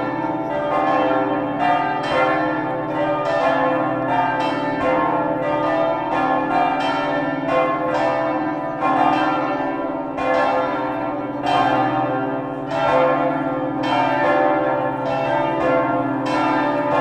Tours, France - Cathedral bells
The Saint-Gatien cathedral bells. It's nothing else than cauldrons, this bells have a pure bad sound, not very respectable for a big cathedral like that.